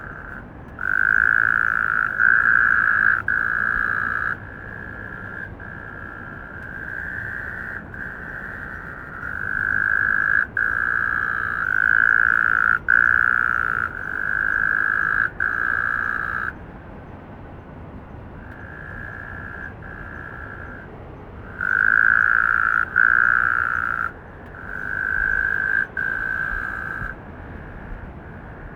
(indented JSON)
{
  "title": "Da'an District, Taipei - Frogs calling",
  "date": "2012-02-06 11:44:00",
  "description": "Frogs calling, Sony ECM-MS907, Sony Hi-MD MZ-RH1",
  "latitude": "25.02",
  "longitude": "121.55",
  "altitude": "24",
  "timezone": "Asia/Taipei"
}